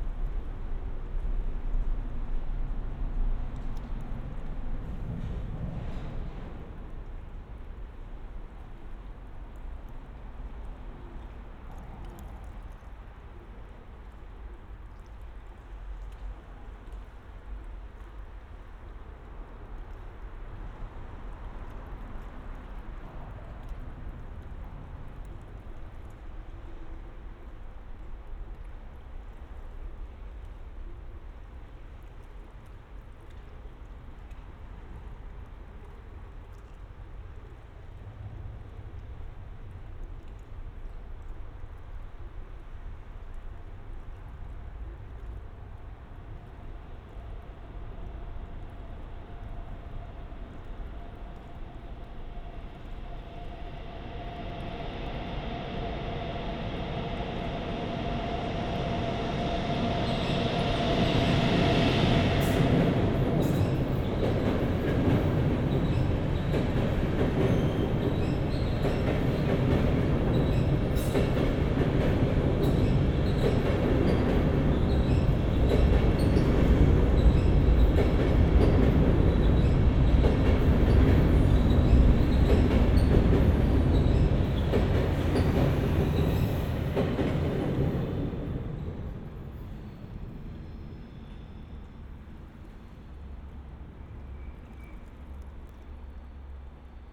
Wasser, drei Brücke drüber, Knarzen, Güterzug, Urban